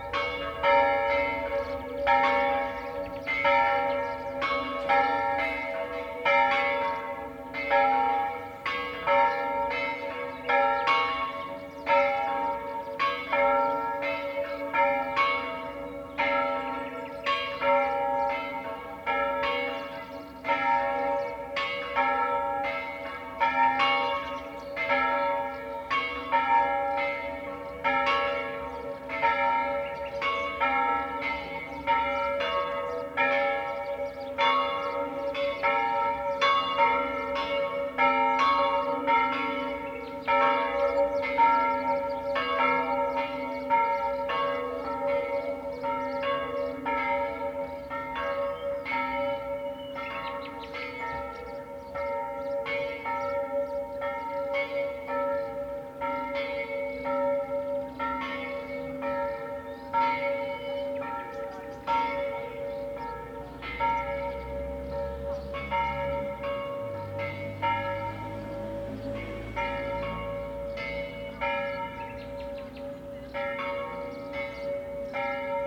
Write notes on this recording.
church bells from the top of the hill heard from the valley below, birds